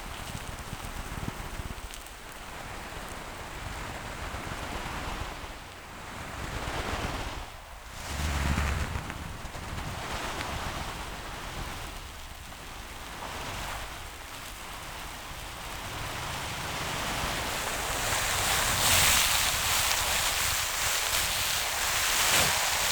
{"title": "Lithuania, Utena, underwater spring (hydrophone)", "date": "2010-11-11 14:20:00", "description": "heres underwater spring, which just fountain in the small river, bubbling sands from the bottom", "latitude": "55.51", "longitude": "25.63", "altitude": "119", "timezone": "Europe/Vilnius"}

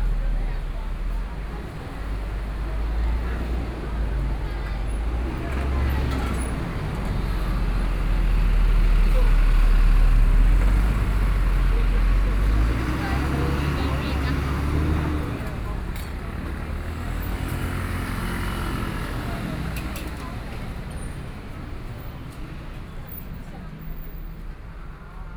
{"title": "Xinshi St., Taipei City - soundwalk", "date": "2013-10-17 17:48:00", "description": "Traffic Noise, The night bazaar, Binaural recordings, Sony PCM D50 + Soundman OKM II", "latitude": "25.13", "longitude": "121.50", "altitude": "14", "timezone": "Asia/Taipei"}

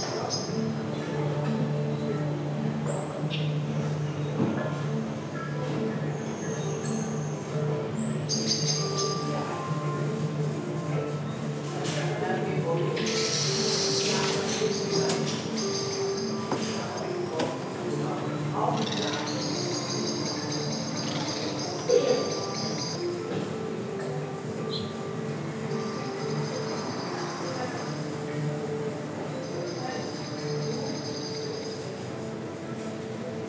{"title": "Mitte, Berlin, Deutschland - evening in the backyard", "date": "2013-06-07 21:12:00", "latitude": "52.54", "longitude": "13.40", "altitude": "50", "timezone": "Europe/Berlin"}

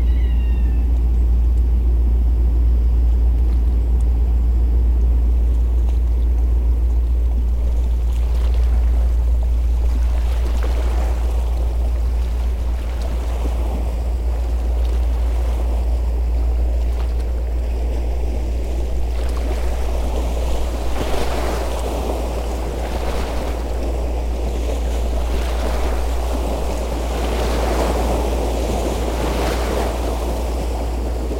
{"title": "Heurteauville, France - Boats", "date": "2016-09-17 11:30:00", "description": "Two boats passing by on the Seine river, The Nirvana, a barge coming from Lyon, and the Beaumonde, a cargo transporting containers.", "latitude": "49.45", "longitude": "0.82", "timezone": "Europe/Paris"}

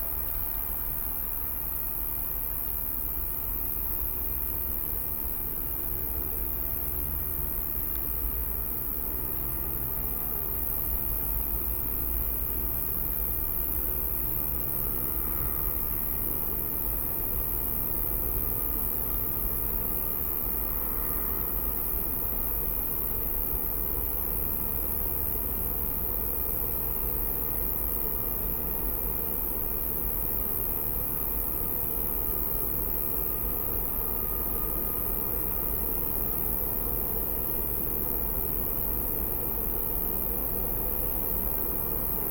{"title": "Horská, Nové Mesto, Slovensko - Late summer evening atmosphere along the railway tracks", "date": "2018-09-20 19:54:00", "latitude": "48.18", "longitude": "17.12", "altitude": "155", "timezone": "Europe/Bratislava"}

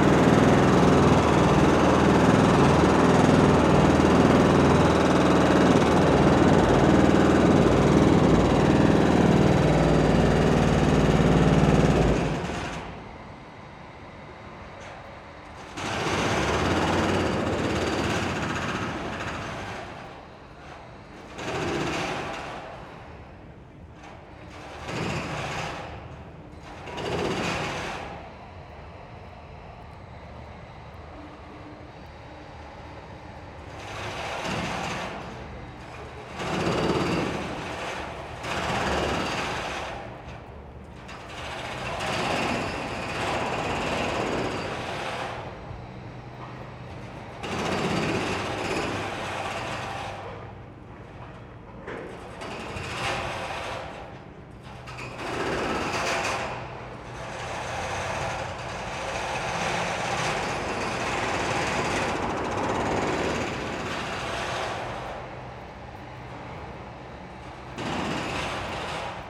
{"title": "Extraodinarily loud building site, Queen Victoria St, London, UK - Extraordinarily loud building site amplified by the narrow passage", "date": "2022-05-17 17:08:00", "description": "The narrow passageway between the site and the City of London School amplifies the drilling and demolition sounds to even higher levels.", "latitude": "51.51", "longitude": "-0.10", "altitude": "17", "timezone": "Europe/London"}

